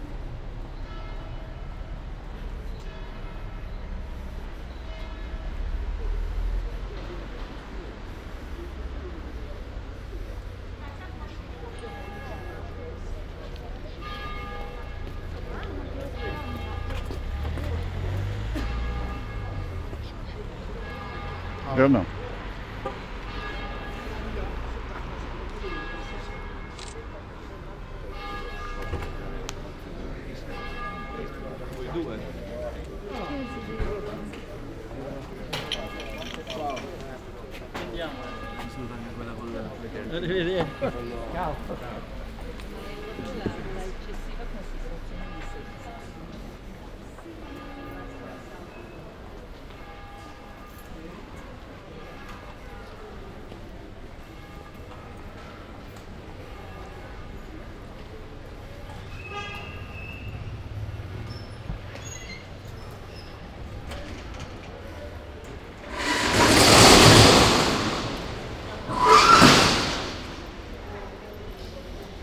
“Walk to outdoor market on Saturday one year later in the time of covid19” Soundwalk
Chapter CLXXII of Ascolto il tuo cuore, città. I listen to your heart, city.
Saturday, May 15th, 2021. Walk in the open-door square market at Piazza Madama Cristina, district of San Salvario, Turin, one year and two months days after emergency disposition due to the epidemic of COVID19.
Start at 11:57 a.m., end at h. 00:15 p.m. duration of recording 18’16”
As binaural recording is suggested headphones listening.
The entire path is associated with a synchronized GPS track recorded in the (kml, gpx, kmz) files downloadable here:
go to similar soundwalk, one year before: 78-Walk to outdoor market on Saturday